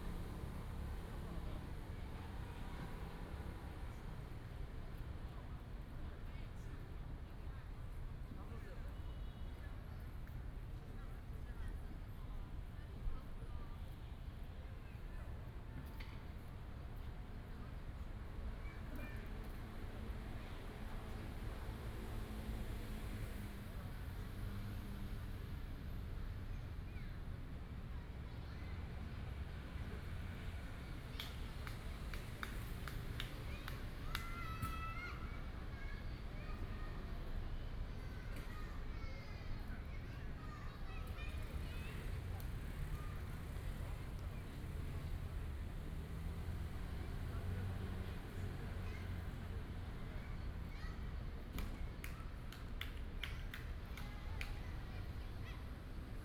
古莊公園, Taipei City - in the Park
in the Park, Child, Construction sound
Da’an District, Taipei City, Taiwan, 3 February 2017